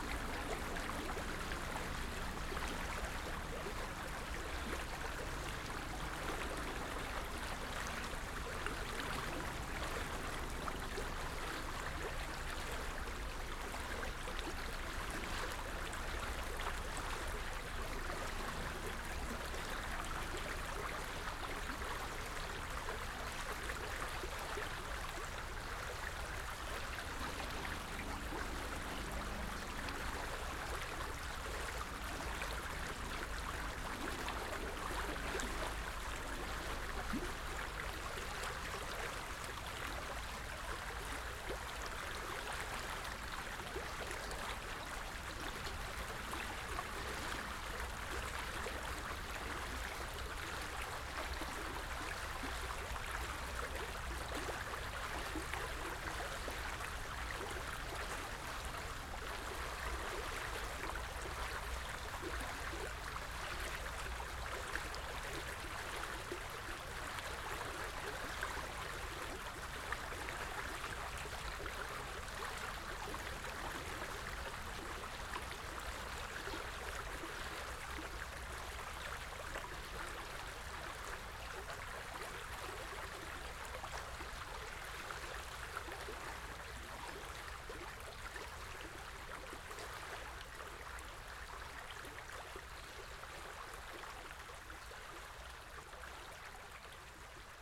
Bahnhofstraße, Bad Berka, Deutschland - Flight Over Stream - Binaural
*Binaural - Please wear headpones.
Flight over a stream in a small city in Germany called Bad Berka..
In the sound: Helicopter engine appearing in the left channel and disappearing in the right channel. Gentle splashes and laps of the stream serve as baseline of the soundscape.
A car engine passes by in the left channel.
Gear: LOM MikroUsi Pro built into binaural encoder and paired with ZOOM F4 Field Recorder.